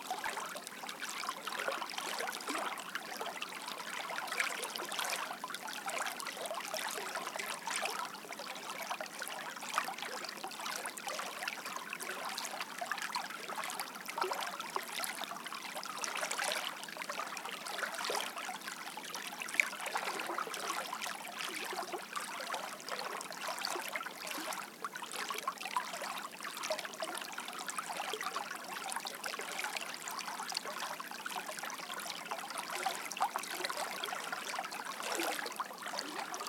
Lithuania, Utena, brooklet
little brook, still unfrozen